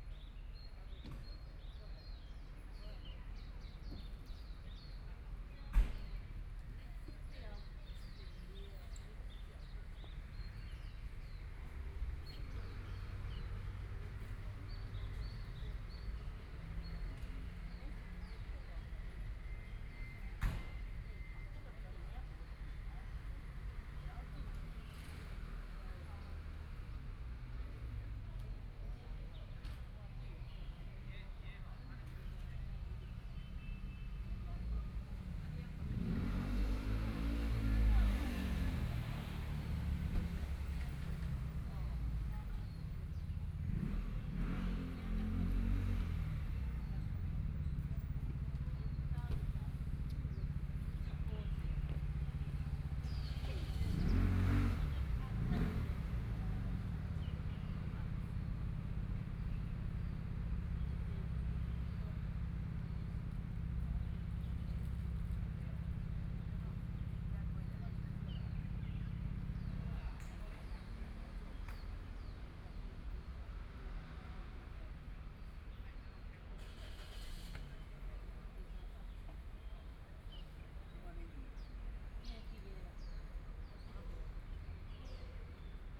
大直里, Taipei City - small Park
Traffic Sound, Birdsong, Community-based small park, Sunny weather
Please turn up the volume
Binaural recordings, Zoom H4n+ Soundman OKM II